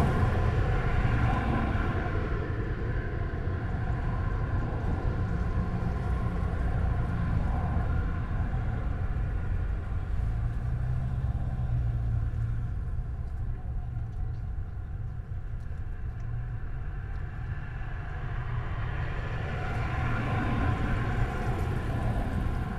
hidden sounds, omnidirectional microphones in two frequency-filtering trash bins at Tallinns main train station. the frequency is dictated by the amount of trash.